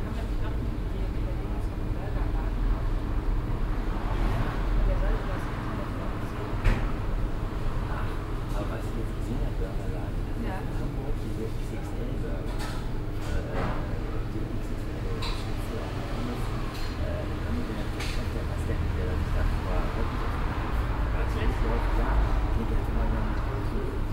cologne, venloerstrasse, biosupermarkt, morgens - koeln, venloerstr, biosupermarkt, morgens, gespräch
soundmap: köln/ nrw
an der bäckereitheke, kaffeezubereitung, kassiererin, einkaufswagen, kundengespräch im hintergrund strassenverkehr
project: social ambiences/ listen to the people - in & outdoor nearfield recordings